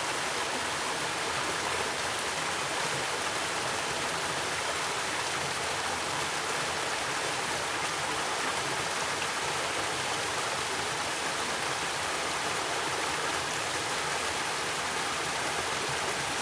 中路坑, 桃米里, Puli Township - small waterfall and Stream
small waterfall, small Stream
Zoom H2n MS+XY
Nantou County, Taiwan, 16 September